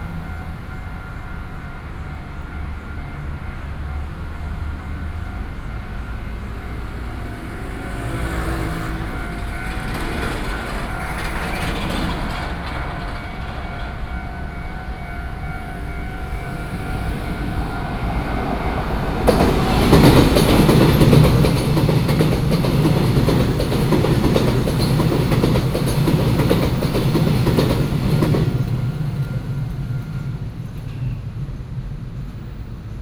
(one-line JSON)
{"title": "Wenhua Rd., Yingge Dist., New Taipei City - Railway crossings", "date": "2012-06-20 08:02:00", "description": "Railway crossings, Traffic Sound, Traveling by train\nSony PCM D50+ Soundman OKM II", "latitude": "24.96", "longitude": "121.36", "altitude": "51", "timezone": "Asia/Taipei"}